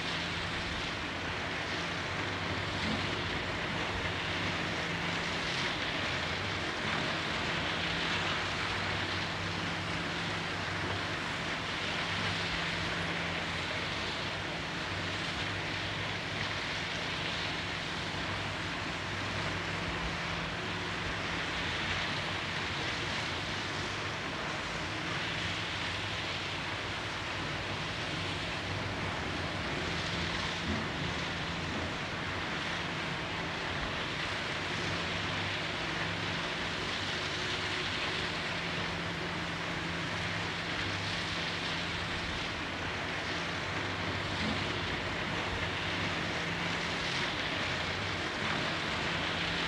Sao Martinho de Sardoura, Portugal - São Martinho de Sardoura, Portugal
São Martinho de Sardoura, Portugal Mapa Sonoro do Rio Douro Douro River Sound Map
17 February 2014